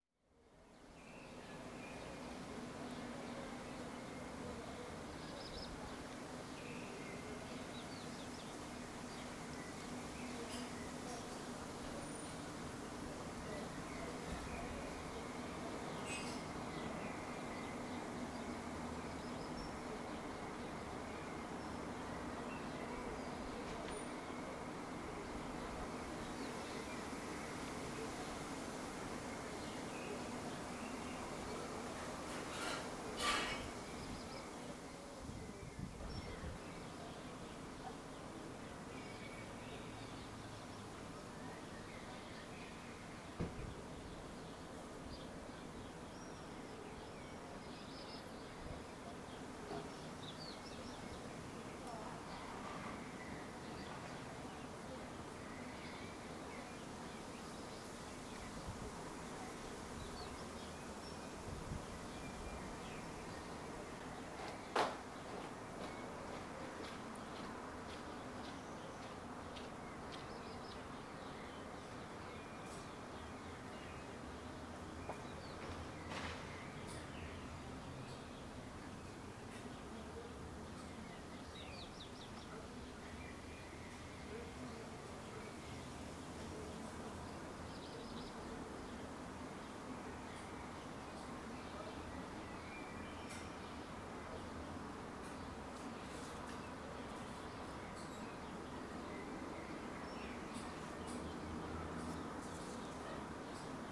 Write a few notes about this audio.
The place is located in the middle of the lively district Gesundbrunnen/Wedding and expresses itself through a mix of urban sounds like cars and talking people but also through a touch of nature with bird sounds and rustling trees. Sometimes it feels like you left the city already...